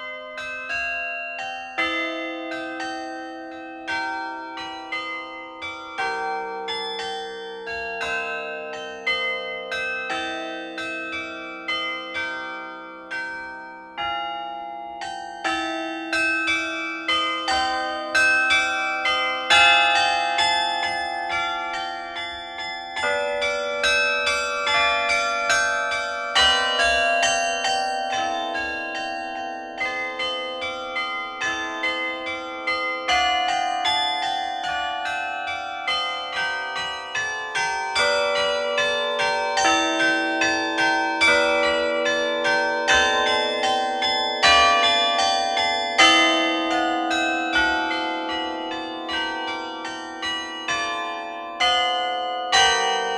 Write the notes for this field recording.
Beffroi de Bergues - Département du Nord, Maître carillonneur : Mr Jacques Martel